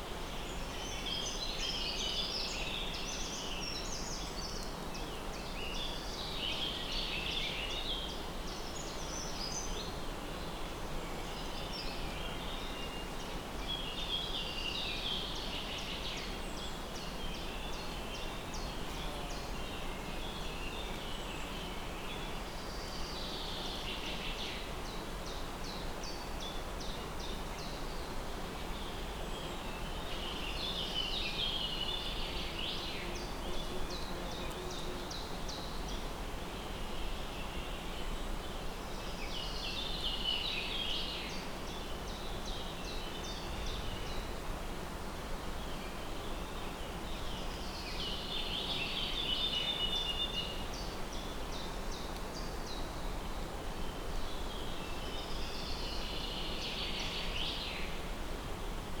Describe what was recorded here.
Birds and wind in forestLom Uši Pro, MixPre II.